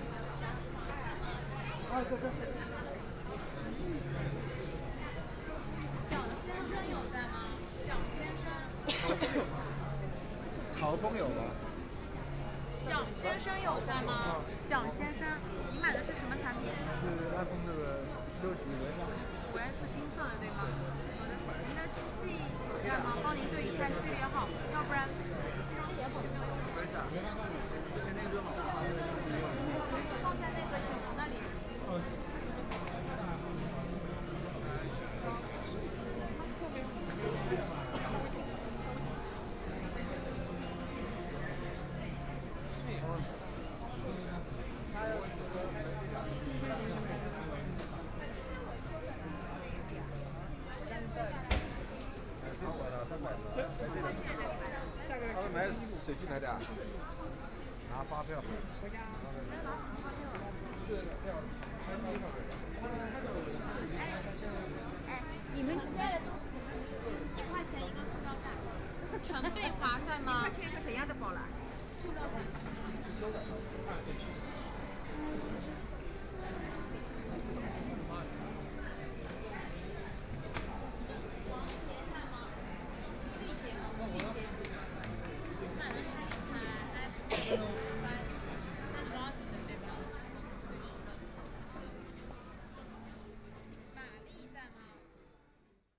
Nanjin Road, Shanghai - in the Apple Store
in the Apple Store, Binaural recording, Zoom H6+ Soundman OKM II
Shanghai, China, November 2013